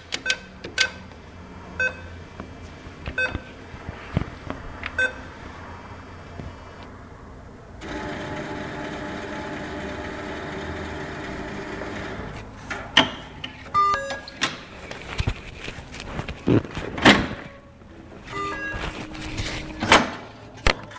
Santocka, Szczecin, Poland
ATM + Street Noise.